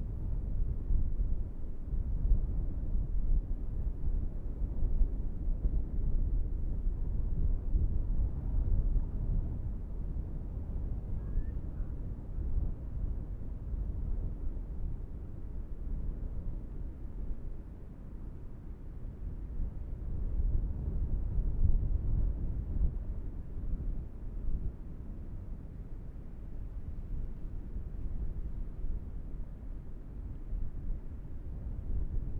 芳苑鄉, Changhua County - The sound of the wind
The sound of the wind, Cold weather, Birds sound, Windy
Zoom H6 MS+Rode NT4
Fangyuan Township, 芳苑海堤, 8 March 2014